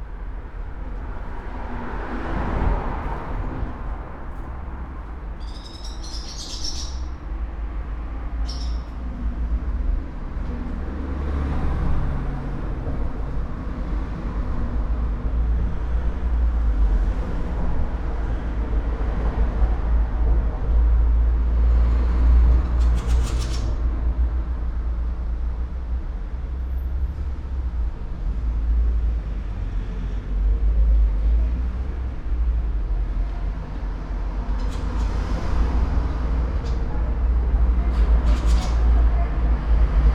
arched corridor, Koroška cesta, Maribor - two swallows